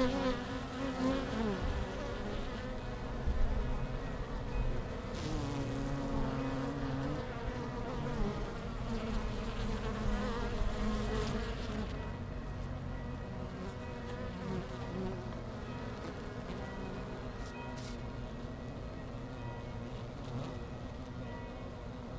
Langel, Köln, Deutschland - Bienen Anfang Oktober / Bees at the beginning of October
Die Sonne ist von den Bienenkästen verschwunden, die meisten Bienen sind auf dem Weg in den Stock. Viele haben einen weißen Rücken von den Blüten des großen Springkrautes, dass jetzt noch blüht. Im Hintergrund läuten die Kirchenglocken im Dorf.
The sun has disappeared from the beehives, most bees are on the way back to the hive. Many have a white back of the flowers of the bee-bums [Impatiens glandulifera] that still flourishes. In the background the church bells are ringing in the village.
3 October 2014, 18:15